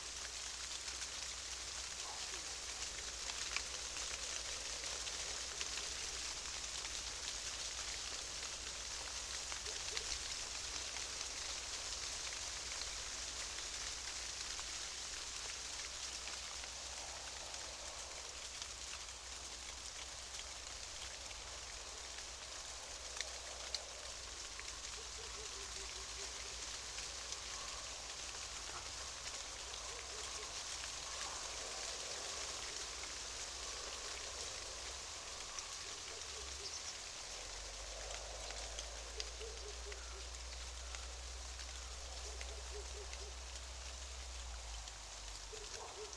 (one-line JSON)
{"title": "aspen tree, Vyzuonos, Lithuania", "date": "2019-09-23 16:10:00", "description": "small microphones in the branches of shivering aspen tree", "latitude": "55.58", "longitude": "25.47", "altitude": "111", "timezone": "Europe/Vilnius"}